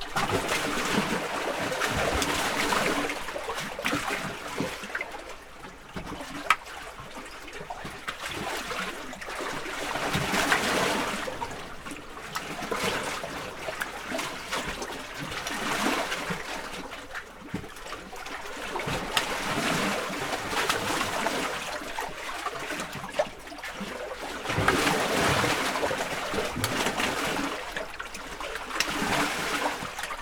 Nallikarin majakka, Oulu, Finland - Waves hitting the breakwater
Waves hitting the breakwater and going through a hole under the rocks at Nallikari beach. Recorded with Zoom H5 with default X/Y capsule. Wind rumble removed in post.
7 May 2020, ~22:00